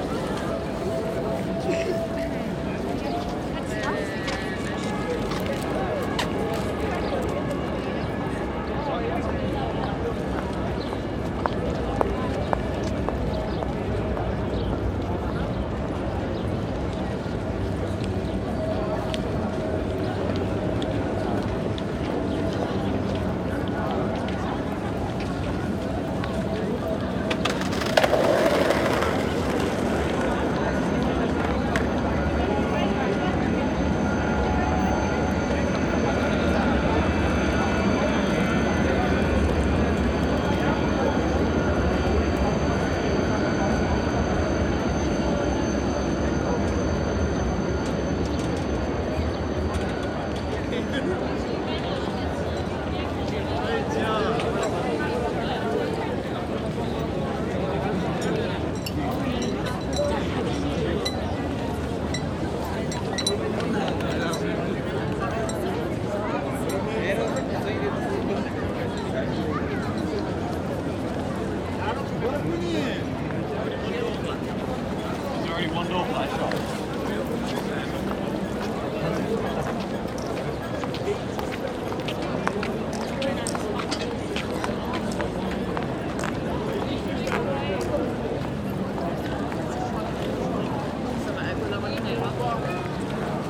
Standort: Vor dem Berolina-Haus, Blick Richtung Weltzeituhr (Südost).
Kurzbeschreibung: Trams, Passanten, Verkehrsrauschen, in der Ferne S- und Regionalbahnen, Straßenmusiker.
Field Recording für die Publikation von Gerhard Paul, Ralph Schock (Hg.) (2013): Sound des Jahrhunderts. Geräusche, Töne, Stimmen - 1889 bis heute (Buch, DVD). Bonn: Bundeszentrale für politische Bildung. ISBN: 978-3-8389-7096-7
Berlin, Germany, 19 April 2012, 17:00